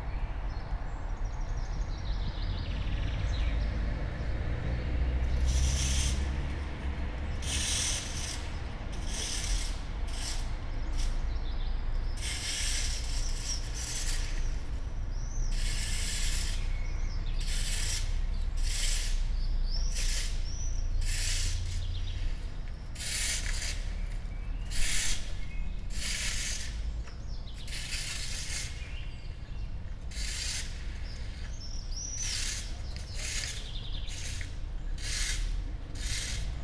all the mornings of the ... - jun 15 2013 saturday 07:57
Maribor, Slovenia, 15 June